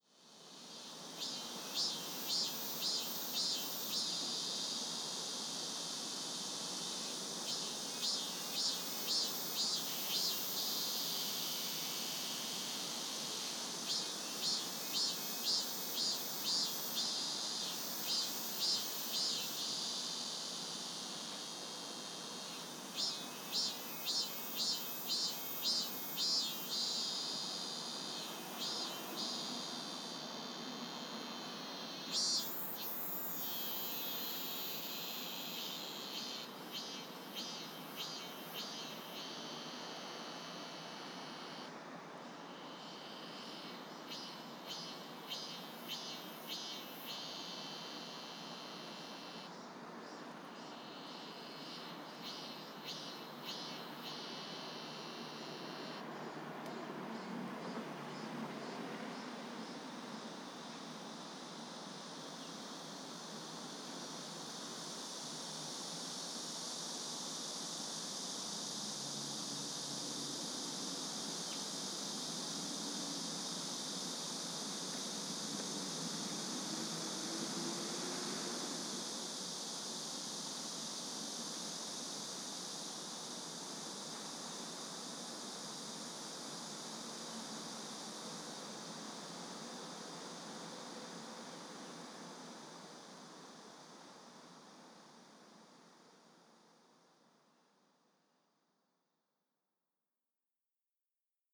대한민국 서울특별시 서초구 서초4동 서초중앙로28길 10 - Summer, Amaemi Cicada(Meimuna opalifera), Apartment Park
Amaemi Cicada(Meimuna Opalifera) making its distinctive noise at Apartment Park.
6 August 2019